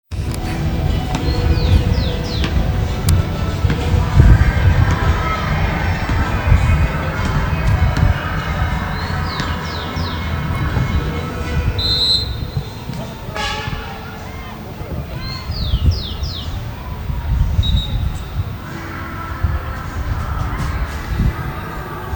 Walking into the sports complex
Guatemala, 10 July 2010